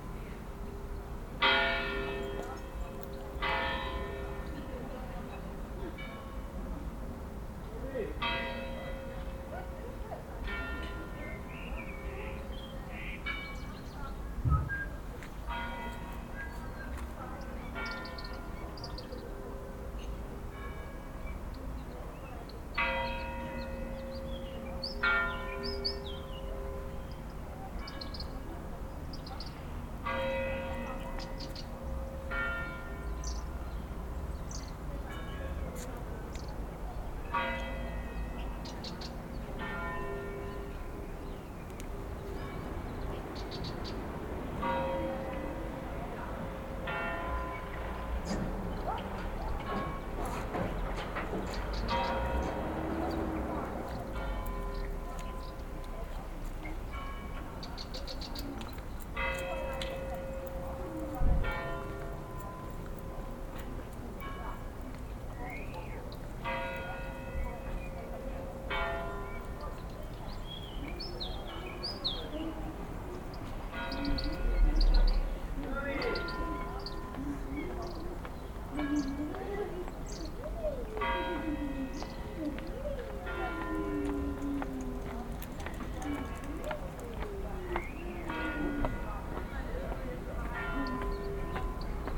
Carrer Major, Altea, Spain - (33) Multiple bells
Binaural recording of multiple bells.
recorded with Soundman OKM + ZoomH2n
sound posted by Katarzyna Trzeciak